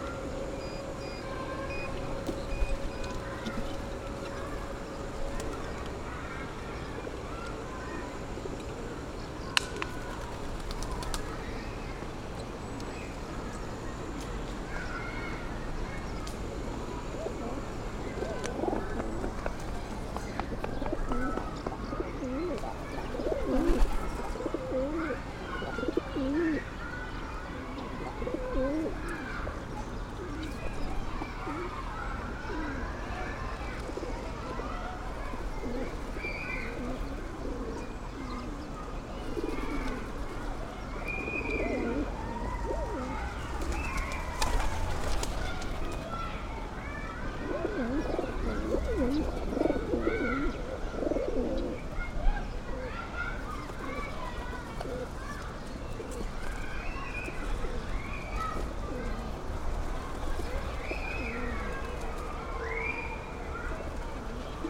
Mostiček nad Kornom, Nova Gorica, Slovenija - Pogovor ob pticah
Two older folks talking about the birds surrounding them.
Nova Gorica, Slovenia, 7 June 2017